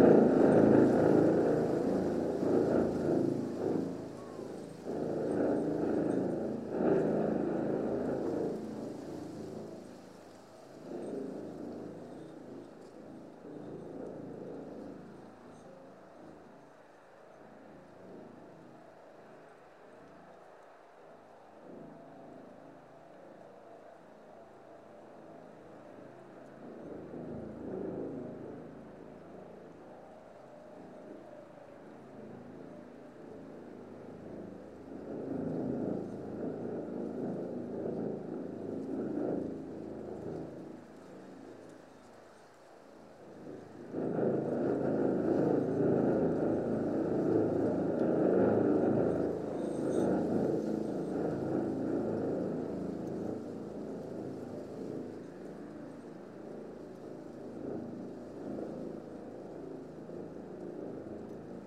Nemeiksciai, Lithuania, wind in a tube
small microphones in a horizontal hollow tube
4 February 2018, ~16:00